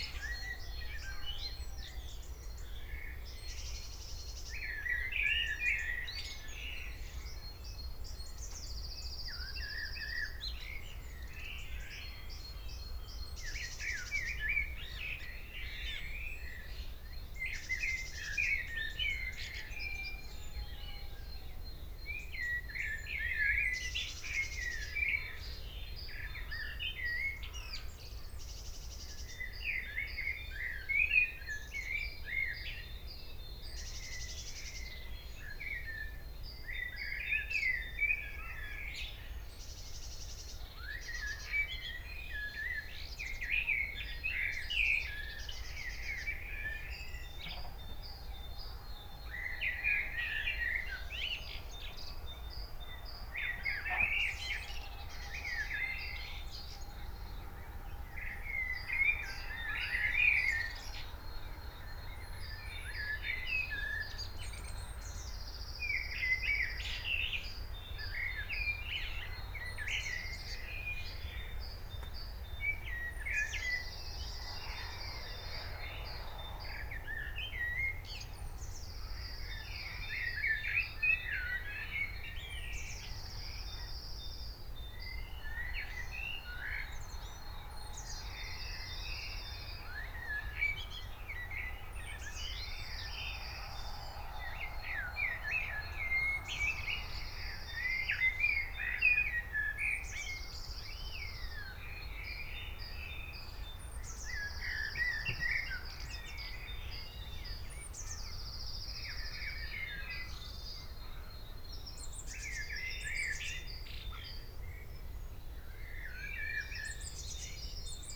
Vierhuisterweg, Rohel, Nederland - early morning birds in Rohel, Fryslãn
i woke up to pee and, hearing the birds outsde, switched on my recorder and went back to sleep for another hour or so.
Spring has just begun, not all birds have returned yet, the blackhat is the latest arrivalk. Enjoy